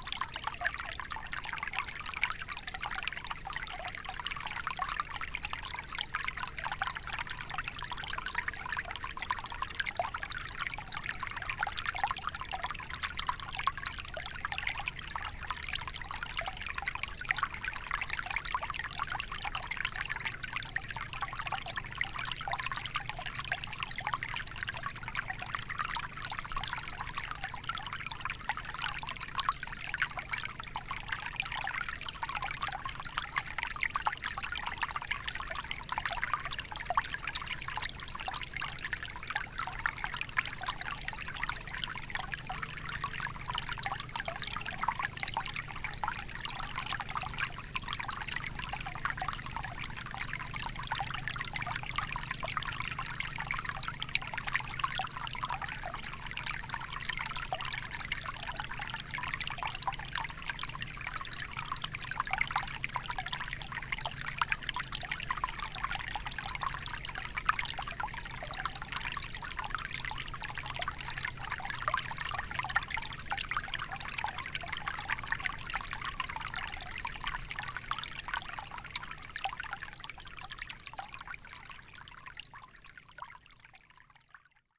Source-Seine, France - Seine stream
The Seine river is 777,6 km long. This is here the sound of the river when it's still a very small stream, flowing into the mint plants. The river is near to be impossible to see, as there's a lot of vegetation.
July 2017